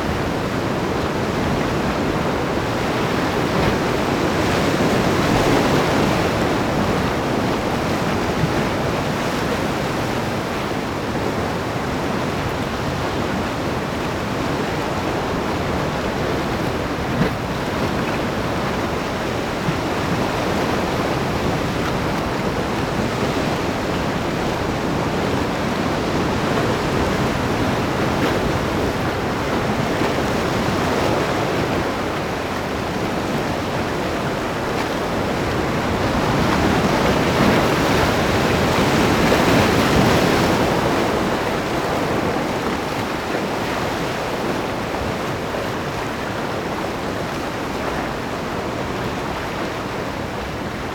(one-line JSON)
{"title": "Frontera, Santa Cruz de Tenerife, España - Rugidos y susurros Atlánticos", "date": "2012-08-19 11:30:00", "description": "This recording was made in a place named El Charco Azul. Up in an artificial wall that limits the pool and the ocean. In that pools the local artisan live some time the winker to soft it before manipulate. Whith that soft winker they make different useful objects as baskets o big saddlebags used in agriculture.", "latitude": "27.76", "longitude": "-18.04", "altitude": "19", "timezone": "Atlantic/Canary"}